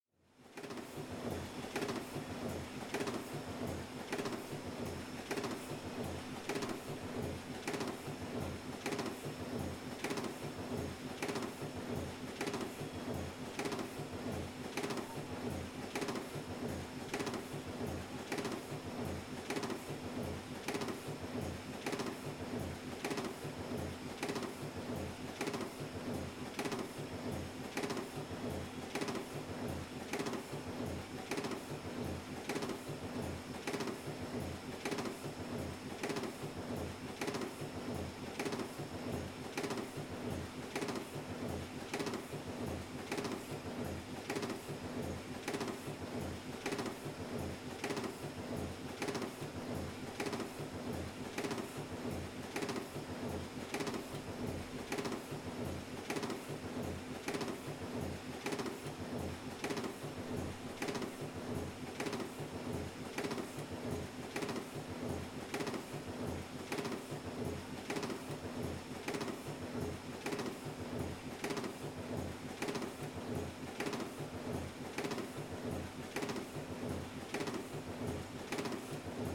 This is the sound of an old printing press at Williams Press Ltd., Berkshire. It is an old type of Litho press with large clunky metal parts, and dates from somewhere between early - mid twentieth century.